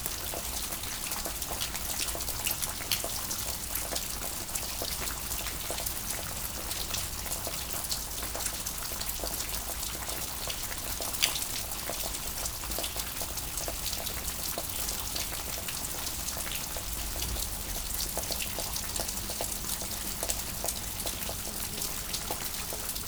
Flumet, France - Stream
A stream is falling from the mountain ; in the entrance of a underground slate quarry, it makes a sound like a constant rain. It's a sunny weather but it's raining everytime here.
8 June 2017, 18:00